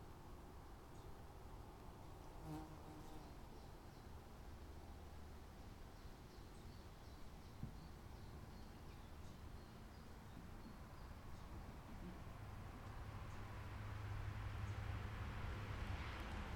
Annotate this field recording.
trigonometrischer punkt am weißen stein, udenbreth, eifel, belgische grenze, 05.07.2008, 17:10, wikipedia: Mit seinen 692 m ü. NN ist der Weiße Stein nicht nur der höchste Berg von Rureifel bzw. Nordeifel und die höchste Erhebung des linksrheinischen Nordrhein-Westfalen, sondern auch die zweithöchste Erhebung in Belgien, obgleich seine höchste Stelle (vermutlich) wenige Meter östlich der B 265 auf deutschem Gebiet liegt. Sie befindet sich an einer nicht genau gekennzeichneten Position innerhalb eines bewaldeten Bereichs, der die hiesig ovalförmige 690-Meter-Höhenlinie übersteigt und etwas nordwestlich von dem an der kleinen Zufahrtstraße rund 60 m west-nordwestlich eines Wasserbehälters bzw. nördlich eines Parkplatzes auf 689,4 m ü. NN befindlichen trigonometrischem Punkt liegt.